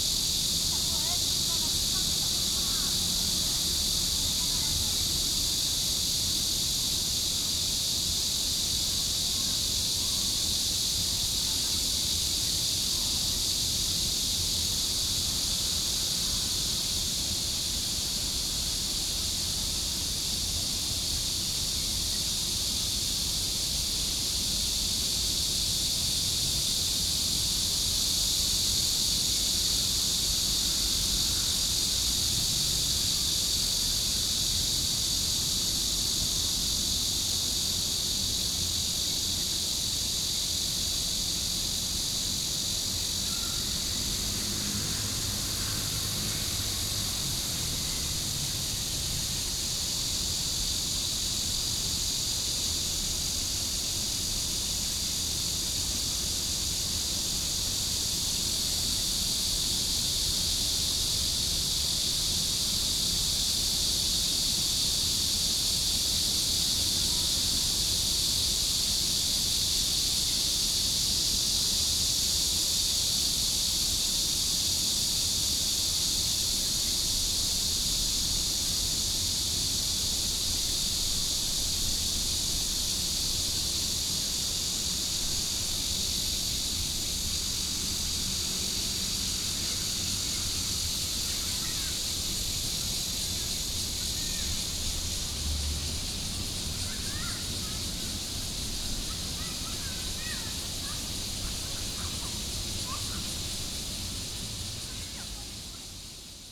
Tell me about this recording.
Streams and cicadas sound, in the park, Traffic sound